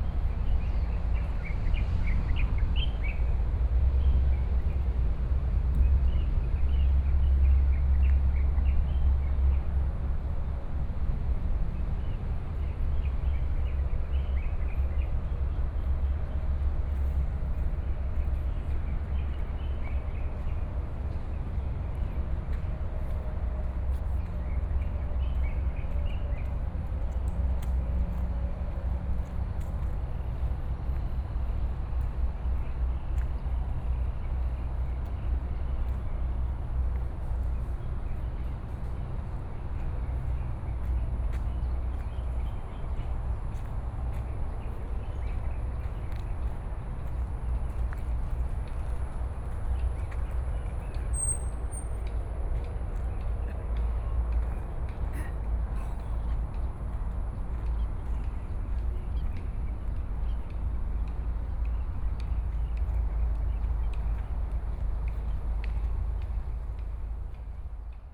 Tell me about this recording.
Walk in the park, Traffic noise is very noticeable Park, birds sound